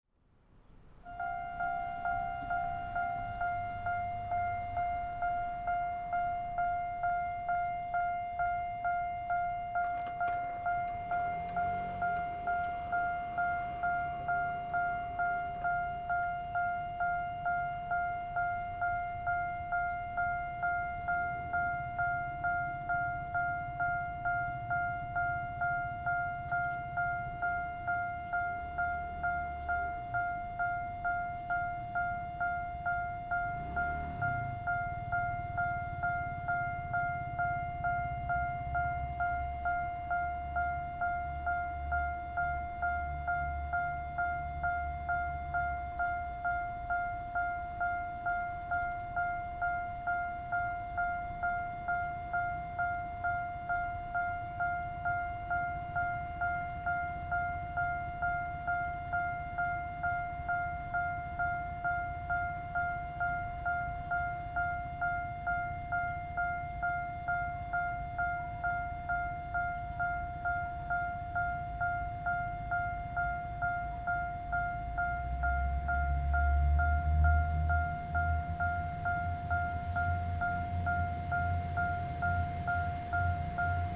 Deyang Rd., Jiaoxi Township - in the Railway level crossing
Near the railroad tracks, Trains traveling through, Traffic Sound, Railway level crossing
Zoom H6 MS+ Rode NT4
Yilan County, Taiwan